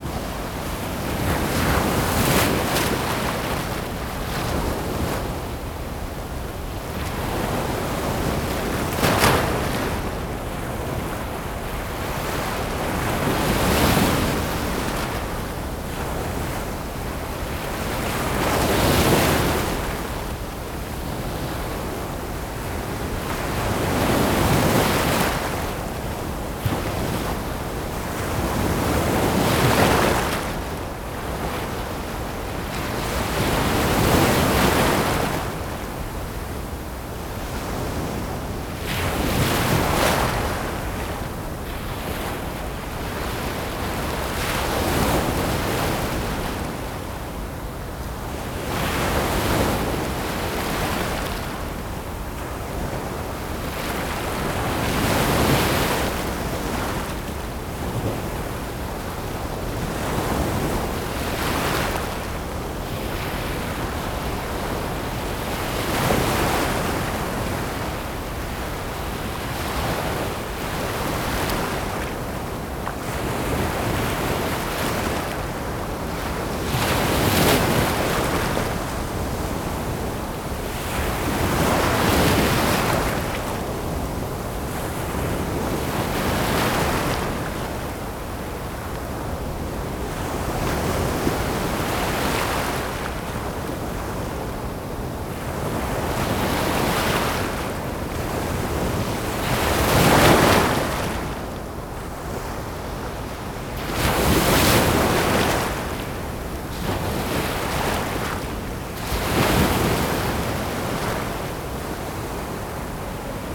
Canet den Berenguer, Valencia, Spain - LIQUID WAVE Agitated Sea, Medium Waves, From 5m in the Water
Canet de Berenguer, SPAIN
Beach at night, recorded in the water at 5m from the beach
REC: Sony PCM-D100 ORTF
7 August 2018